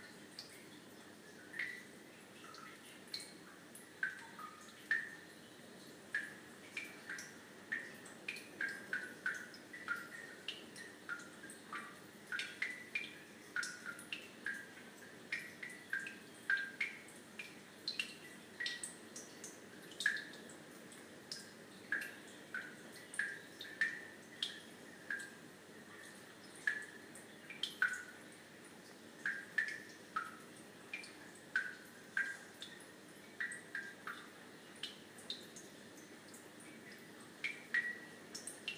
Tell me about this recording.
We took our tiny Eriba on the North Coast (of Scotland) 500 and holed up here for the night. It was raining really hard but I braved the wet to go for my late night pee in the campsite loos. It was deserted and as I stood there I was entertained by the tune being played in the very slow filling cistern: drip, driplet, drippity, drip, drip. It was great and a big contrast to the wild lashing rain outside. I used my iPhone 5 to record this mono track